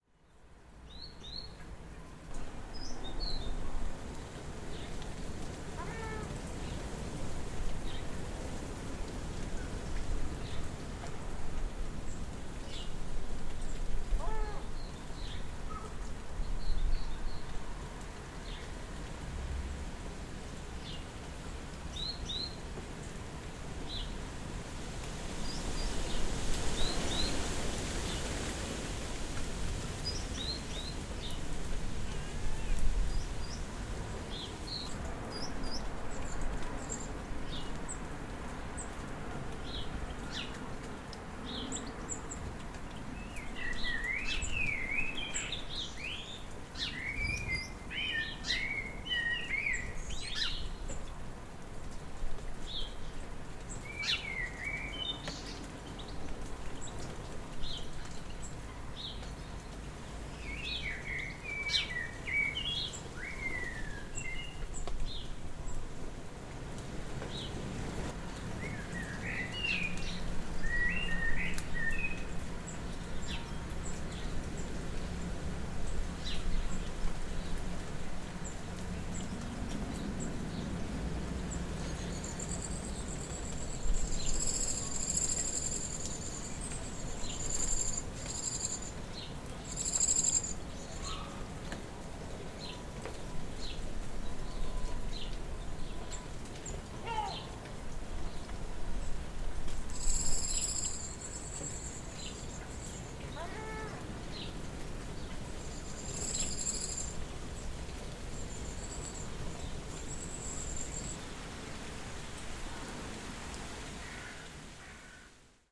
Bridport, Dorset, UK - Dawn Chorus 2
Later that morning - both recordings made for International Dawn Chorus Day